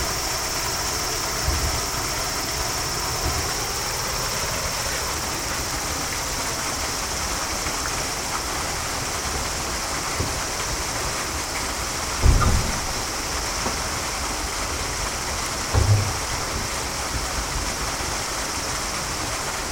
Dainakacho, Higashiomi, Shiga Prefecture, Japan - Notogawa Suisha
Sounds of Notogawa Suisha (waterwheel), an old waterwheel in the Japanese countryside. The site includes a small park, historical information, and a boat rental facility. Recorded with a Sony M10 recorder and builtin mics on August 13, 2014.